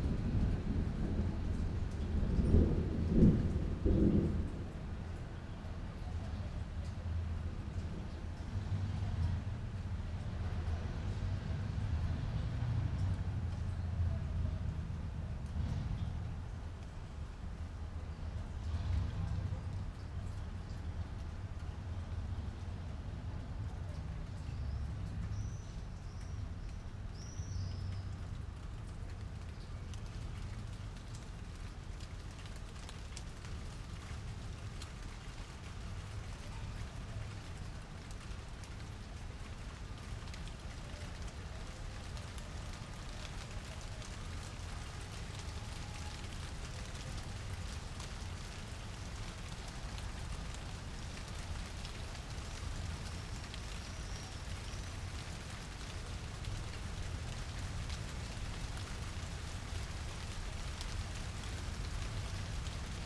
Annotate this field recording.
Recorded with omni pair of mics from a balcony overlooking a city centre hidden garden